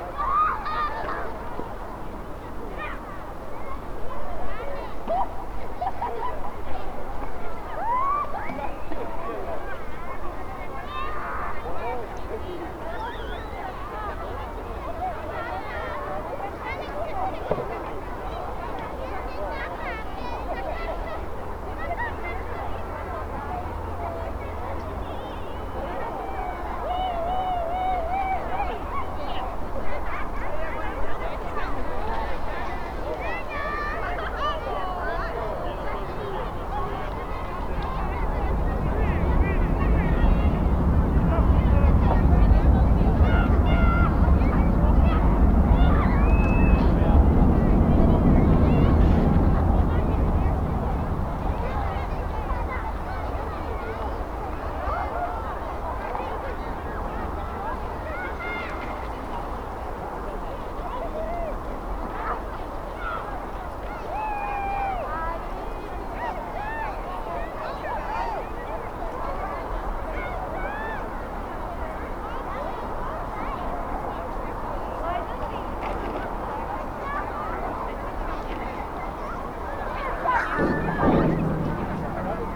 Vistula riverbank, Kraków, Poland - (722 UNI) Children playing in distance on snowy winter Sunday
Recording of children playing taken from across the river. During the time of recording on tram passed on the bridge on the left and one train on the bridge on the right.
Recoreded with UNI mics of Tascam DR100 MK III.
17 January 2021, 14:00